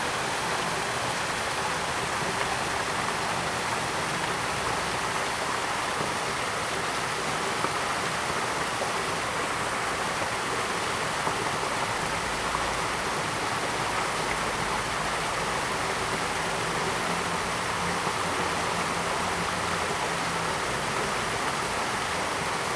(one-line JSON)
{"title": "吳江村, Fuli Township - Streams", "date": "2014-09-07 17:56:00", "description": "Streams after heavy rain, Traffic Sound, Birdsong\nZoom H2n MS +XY", "latitude": "23.28", "longitude": "121.32", "altitude": "154", "timezone": "Asia/Taipei"}